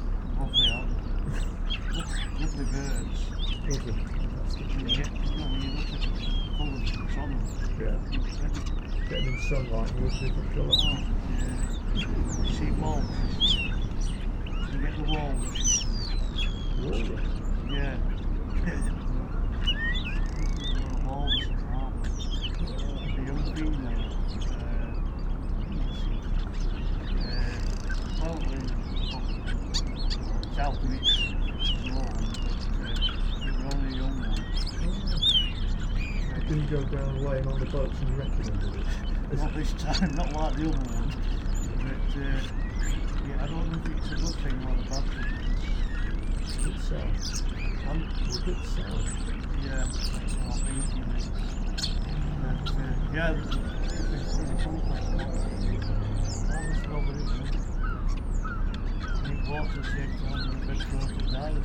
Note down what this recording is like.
starlings on the harbour light ... xlr sass to zoom h5 ... bird calls from ... lesser black-backed gull ... herring gull ... grey heron ... wren ... ostercatcher ... harbour noises and a conversation about a walrus ...